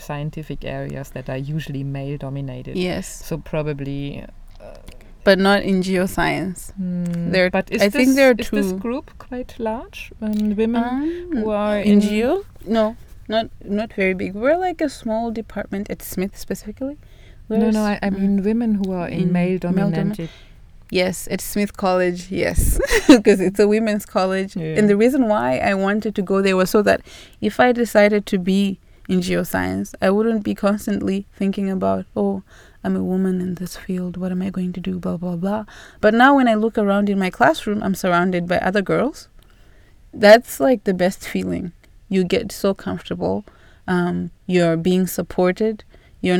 in the grounds of Tusimpe Mission, Binga - i am a black woman geo-scientist..
Binga, Zimbabwe, 31 July 2016